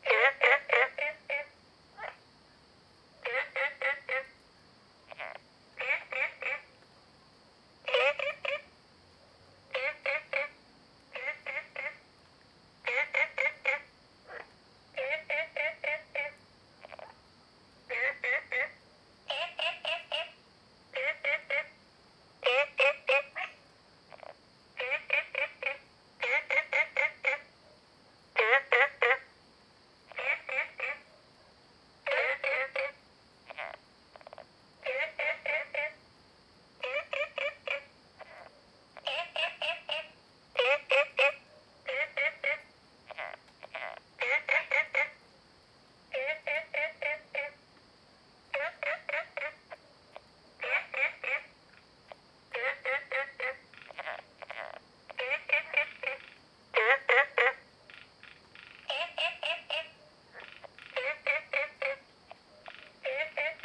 Frogs chirping, Ecological pool
Zoom H2n MS+XY